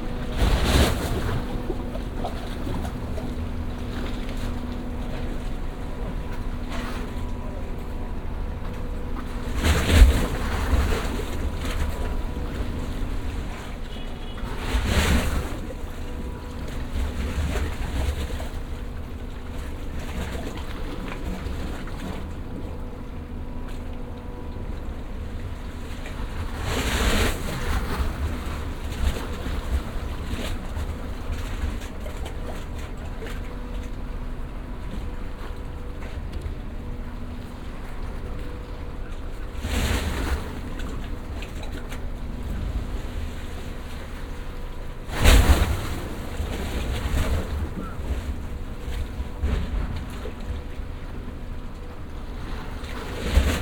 lipari, harbour - hollow pier
harbour area, water swasing in hollow pier